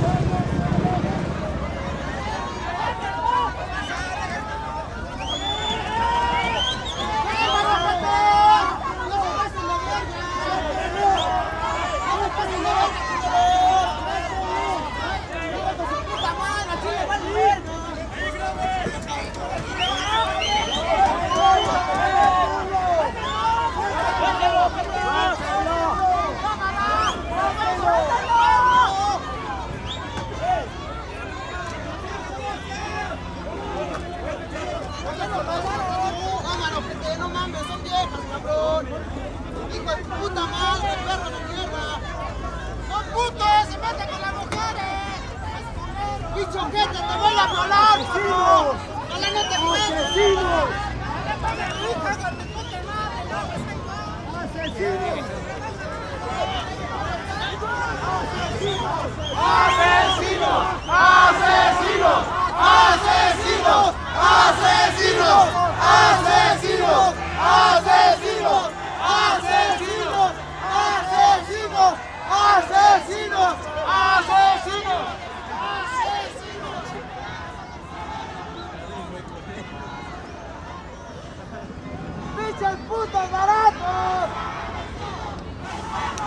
Centro Histórico, Mexico, District fédéral, Mexique - Confrontation between the crowd and the police in Mexico City after a mass eviction of the teachers
Confrontation with the police in Mexico City this 13th of september, after a mass eviction of the teachers who where in the Zocalo (main place of Mexico City).
Sound recorded in front of the 'BELLAS ARTES' monument.
Recorded by a binaural setup: 2 x SANKEN COS11D and an andy recorder Olympus.
Federal District, Mexico, 13 September 2013